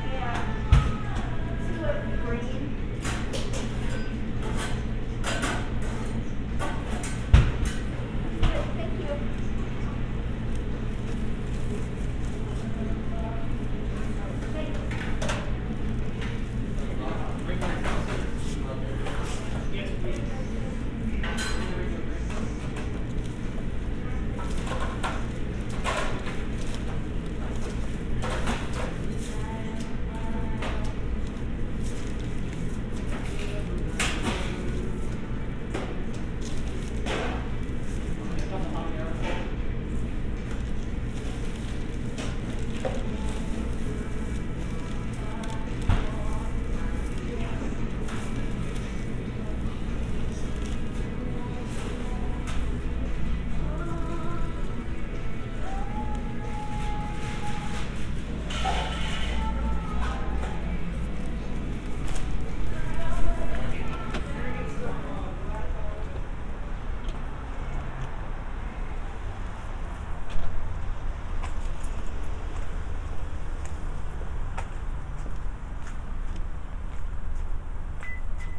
Cumberland Pkwy SE, Atlanta, GA, USA - Willys Mexican Grill
The ambience of a Mexican restaurant. Kitchen sounds, people ordering, crinkling of paper bags, etc. The restaurant was less busy than usual due to covid restrictions, and there was only one person dining in.
[Tascam DR-100mkiii & Roland CS-10EM binaural earbuds]
Georgia, United States, 10 January 2021